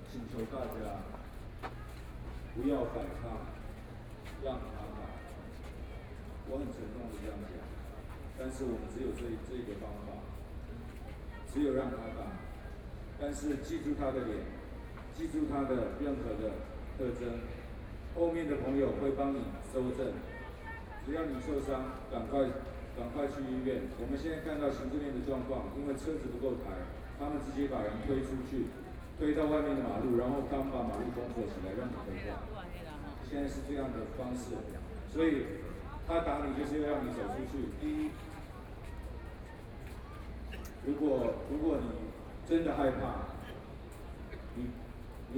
Executive Yuan, Taiwan - Occupied Executive Yuan
Protest, University students gathered to protest the government, Occupied Executive Yuan
Binaural recordings